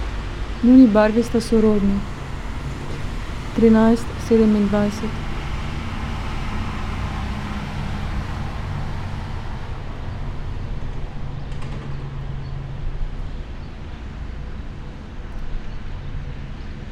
writing reading window, Karl Liebknecht Straße, Berlin, Germany - part 13
26 May 2013, 09:30